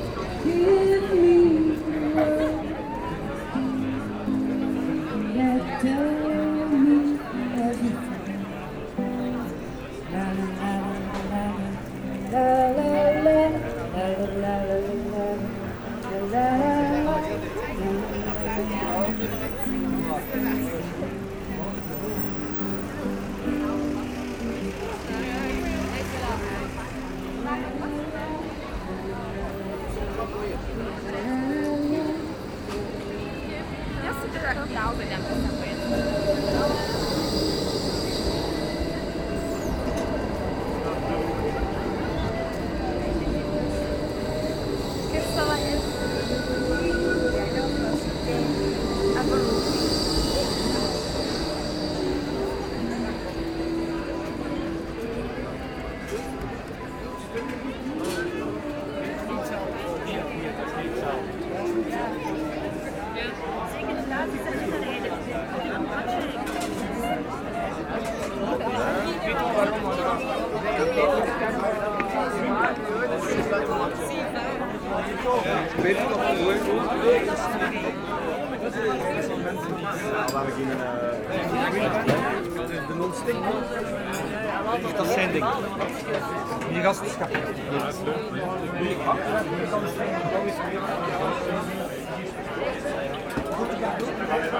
Groentenmarkt, Gent, Belgium - The pleasant Ghent city on a sunny sunday afternoon
On a very sunny sunday, during a smooth autumn, its a good day to take a walk on the pleasant city of Gent (Gent in dutch, Gand in french, Ghent in english). Its a dutch speaking city. In this recording : tramways driving on a curve, very very very much tourists, street singers, ice cream, white wine, oysters, cuberdon (belgian sweets). Nothing else than a sweet sunday afternoon enjoying the sun and nothing else matters. Walking through Korenmarkt, Groentenmarkt, Pensmarkt, Graslei.